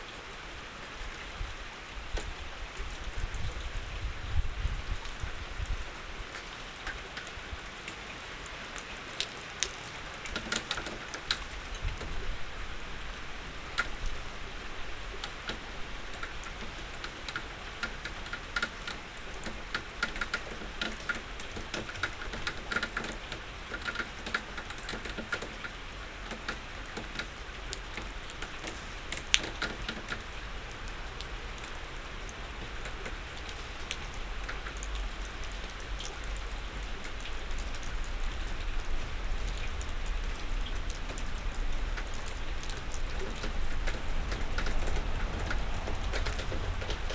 berlin, sanderstraße: vor eckkneipe - the city, the country & me: in front of a pub
under the porch of the pub
the city, the country & me: june 5, 2012
99 facets of rain
Berlin, Germany, 5 June